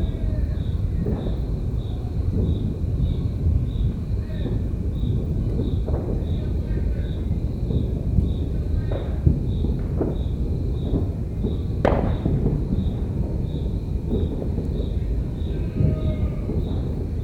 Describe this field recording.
New Year 2022. EM 172's on a Jecklin Disc via SLC-1 to Zoom H2n